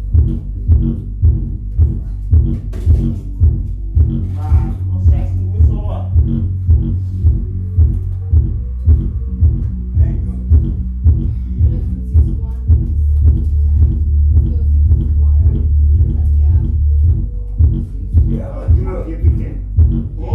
dauphinehof, dauphinestr. 40. 4030 linz
Linz, Austria, 16 January 2015, ~21:00